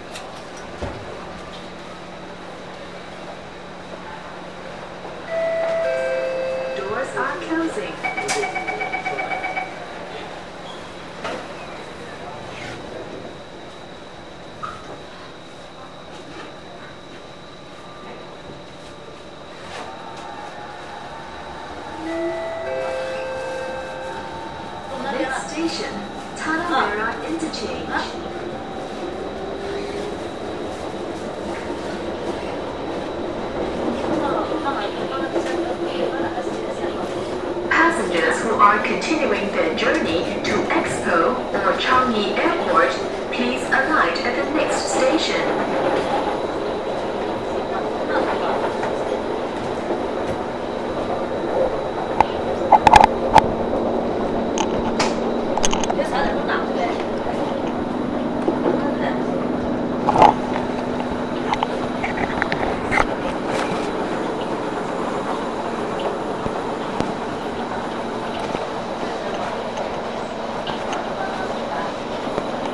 MRT Expo stn, Singapore
On the train to Changi Airpot, MRT